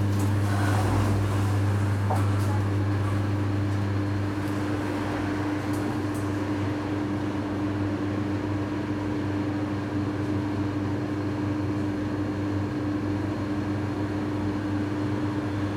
Piatkowo, Chata Polska convenient store - sliding door entrance filter
standing between two sets of sliding door under a big air conditioner. when the doors were closed, they tightly sealed the sounds from inside the store and from the street on the other side. you can only hear the isolated drone of the air conditioner. as soon as the doors slide open all kind of sounds gush into the small space. crying children, customers, cash registers, clutter of the shopping carts, barking dog, passing cars, steps.